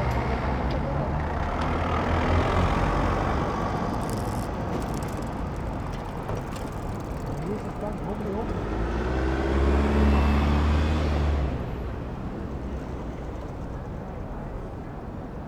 Berlin: Vermessungspunkt Maybachufer / Bürknerstraße - Klangvermessung Kreuzkölln ::: 26.08.2011 ::: 21:30
26 August, 21:30